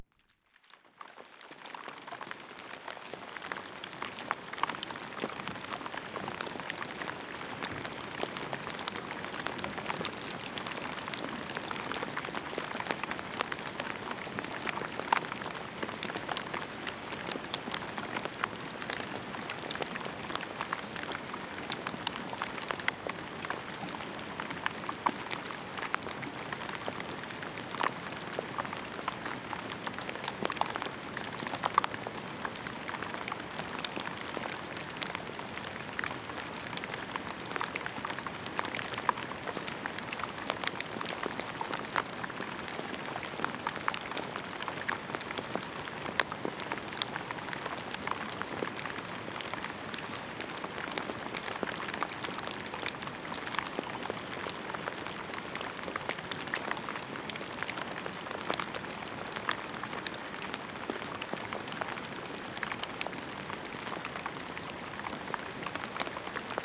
Wallstreet, CO, USA - Rain Falling on Collapsed Widowmaker...
Rain falling on burnt/collapsed pine six years after the Fourmile Canyon Forest Fire of September 2016
Recorded with a pair of DPA4060s and a Marantz PMD661.